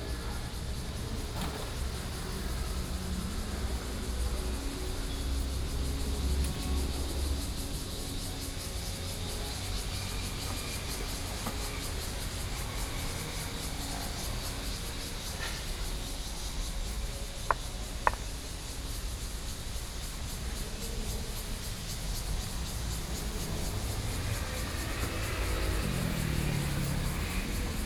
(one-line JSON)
{"title": "KaiNan High School of Commerce and Industry - soundwalk", "date": "2013-07-20 18:37:00", "description": "walking in the street, Zoom H4n+ Soundman OKM II", "latitude": "25.04", "longitude": "121.52", "altitude": "13", "timezone": "Asia/Taipei"}